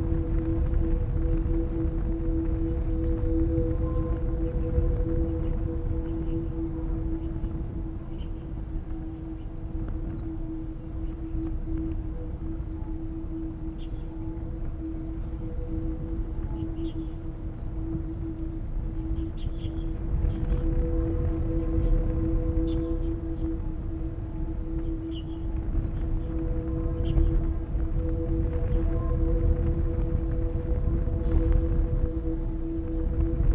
水防道路五段, Zhubei City - wind and Iron railings
On the bank, wind, Iron railings, sound of birds
Zoom H2n MS+XY